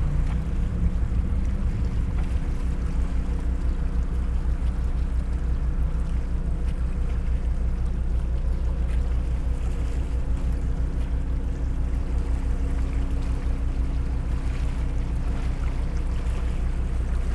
Muggia Triest, Italy - ship passing, engine drone
drone of a departing ship near Muggia.
(SD702, AT BP4025)
Muggia, Trieste, Italy, 6 September 2013